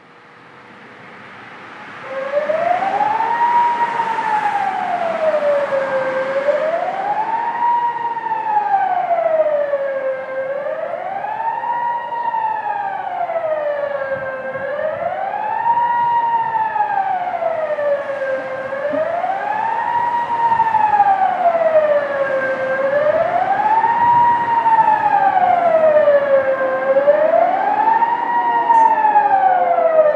Rue de Bernex, Bernex, Suisse - Swiss Alarm sirens Test
Test des sirènes d'alarme dans toute la Suisse le premier mercredi de février.
Test of alarm sirens throughout Switzerland on the first Wednesday of February.
Rec: Zoom F2n + proceed